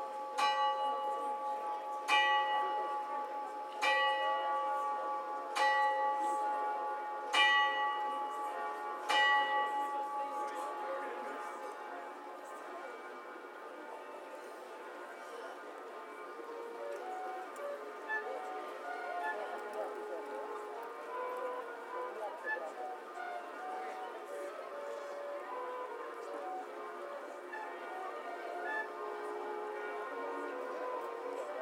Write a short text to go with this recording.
Olomuc Astronomical Clock at noon recorded with Zoom H2n, sound posted by Katarzyna Trzeciak